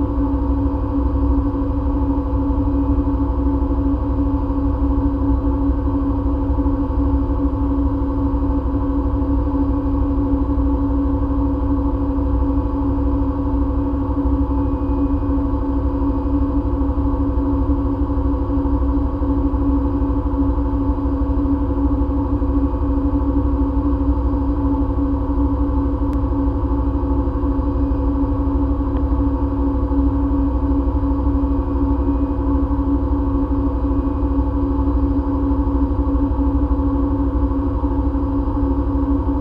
Mont-Saint-Guibert, Belgique - The dump
This factory is using biogas in aim to produce energy. Gas comes from the biggest dump of Belgium. Recording of an enormous burning torch, using contact microphone.
Mont-Saint-Guibert, Belgium